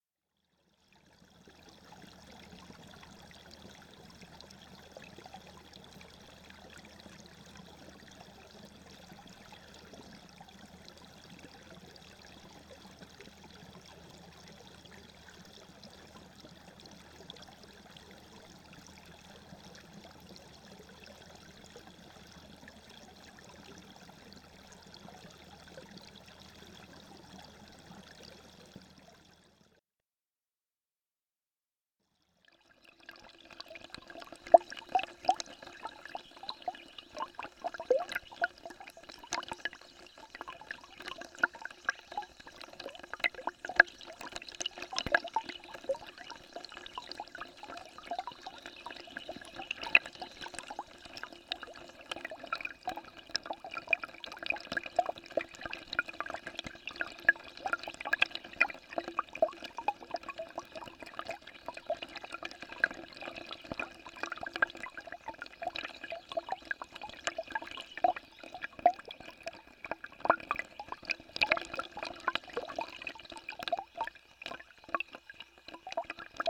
Millennium Park, Chicago, IL, USA - Crown Fountain - Below Ground
Recorded with Zoom H4N with external hydrophone. The clip consists of three clips with different hydrophone placement as below:
1. Hydrophone was placed below ground in the 3/4" black granite gap where water drains in the basin, around 2-3 inches below the water surface.
2. Hydrophone was placed at the same location, but just beneath the water surface.
3. Hydrophone was placed below ground, in the gap between the metal grate directly under the waterfall and the black granite tile.
2 June 2017